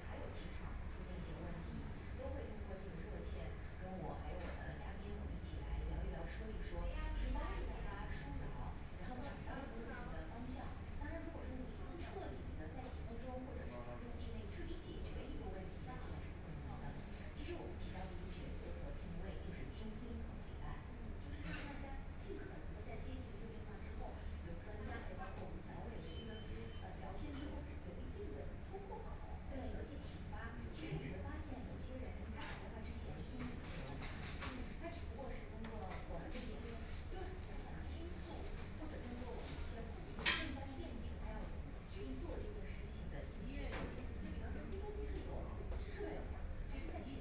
South Station Road, Shanghai - In the convenience store inside
In the convenience store inside, Radio program sound, Voice prompts to enter the store when, Binaural recording, Zoom H6+ Soundman OKM II